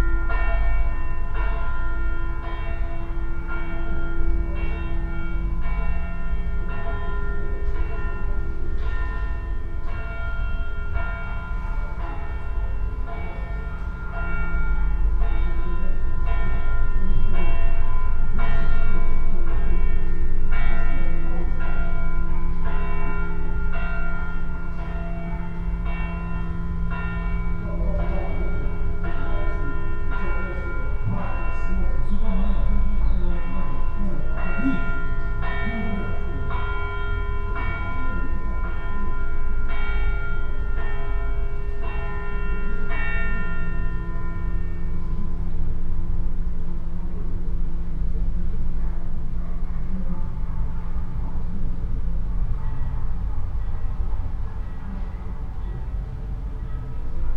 Savinjsko nabrežje, Celje, Slovenia - flood barrier resonance
from within flood barrier fragment at the river bank, church bells